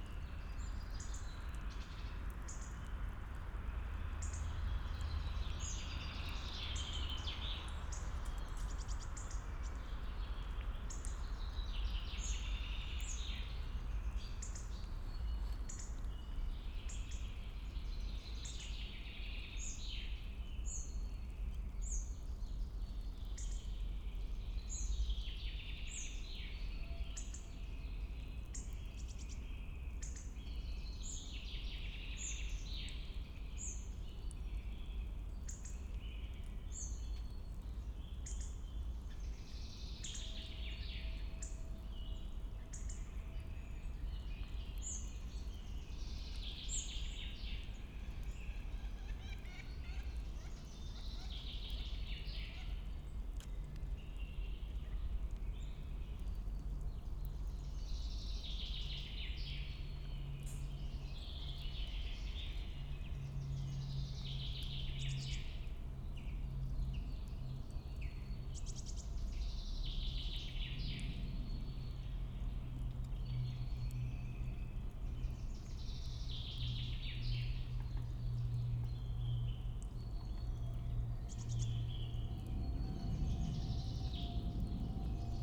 source of the river Wuhle, light flow of water, spring forest ambience, an aircraft
(SD702, AT BP4025)
Ahrensfelde, Germany, 28 March 2015, 15:20